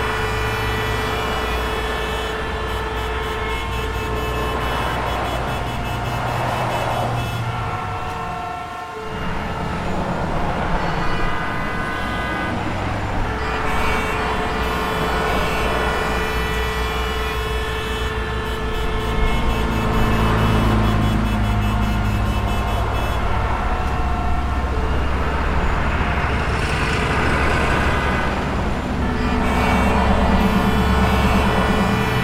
Viggiù VA, Italia - traffic jam
viggiu beautiful city